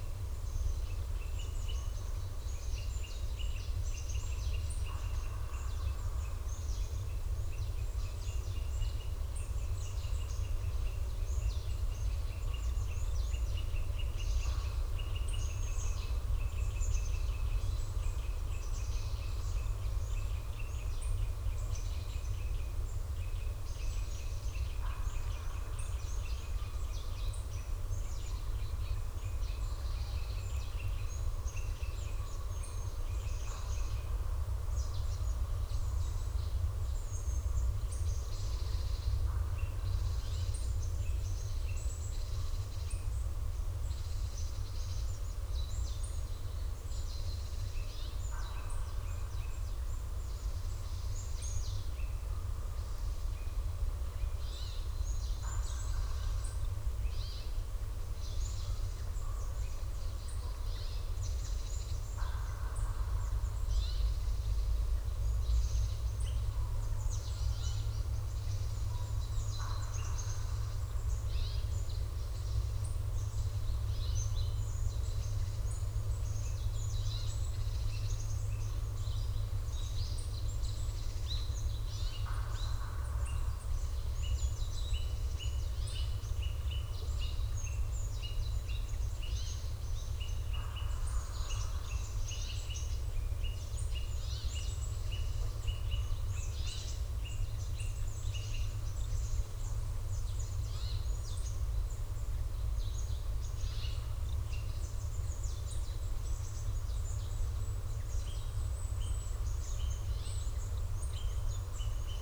Abandoned woodpeckers house on Jung-do 딱따구리의 빈집 (中島)
...a cavity in a tree in a remnant wood, well formed entrance and deep recess...possibly a woodpecker's nest, now abandoned...low enough to the ground to be accessible...just after dawn on Jung-do and already the sounds of nearby construction work become audible...story of rapid urban expansion...